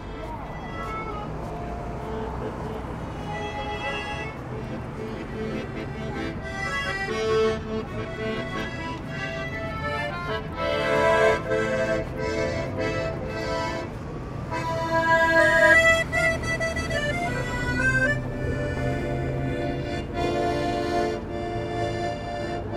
{"title": "Pont d'Arcole, Paris, France - Accordion", "date": "2016-09-23 16:00:00", "description": "A very young tramp is playing accordion on the pont d'Arcole.", "latitude": "48.86", "longitude": "2.35", "altitude": "30", "timezone": "Europe/Paris"}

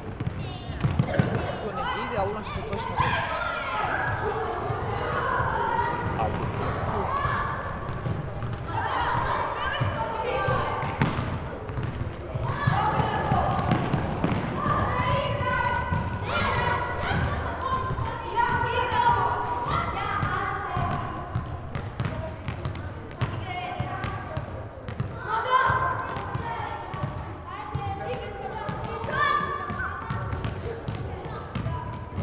{"title": "Rijeka, Zamet, SportVenue, handball training", "date": "2011-03-02 10:45:00", "latitude": "45.34", "longitude": "14.38", "altitude": "108", "timezone": "CET"}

